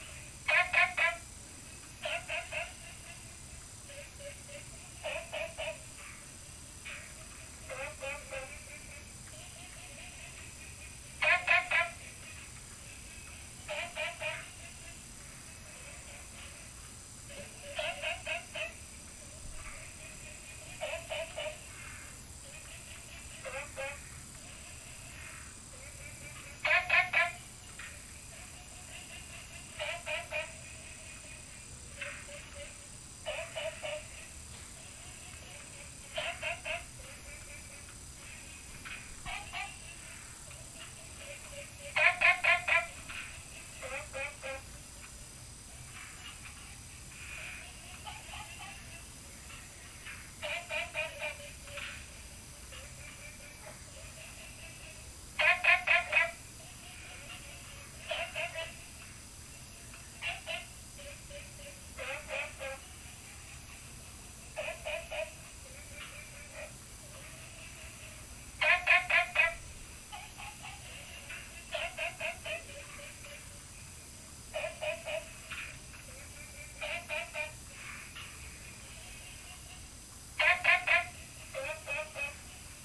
青蛙ㄚ 婆的家, Puli Township - Frog calls
Frog calls
Binaural recordings
Sony PCM D100+ Soundman OKM II